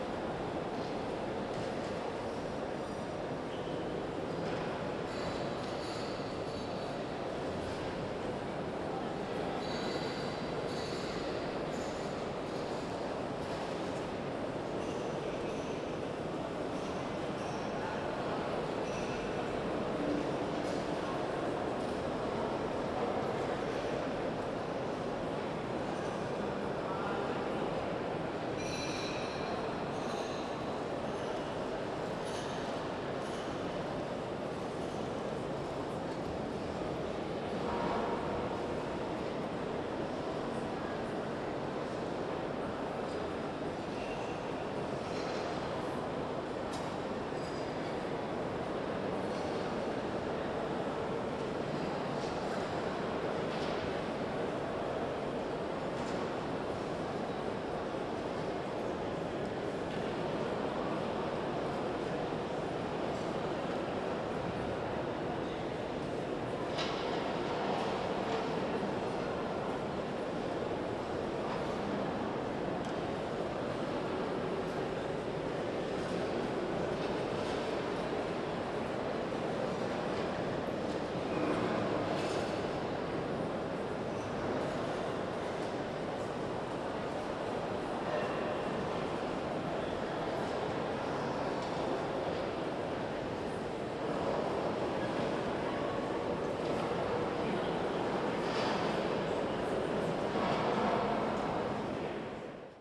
Zürich, UNI, Schweiz - Lichthof, Mensa
Ich stehe im Treppenhaus, oberhalb des Lichthofes.